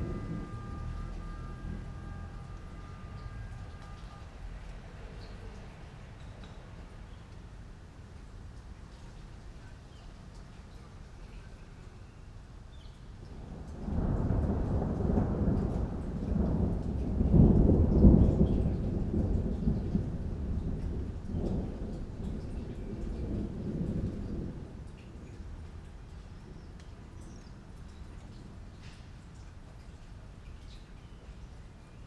Via Lanzone, Milano MI, Italy - Rain in a milanese garden
Recorded with omni pair of mics from a balcony overlooking a city centre hidden garden